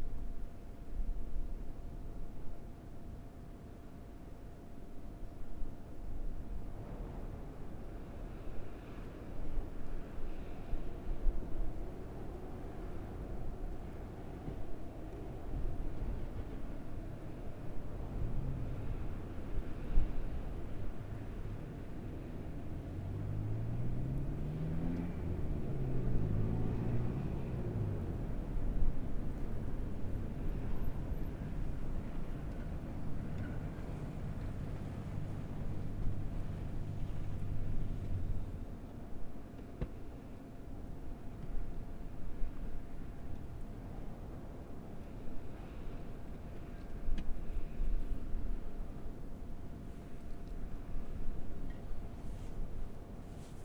neoscenes: a minute at the Center of the Universe
Mosca, CO, USA, 24 August 2011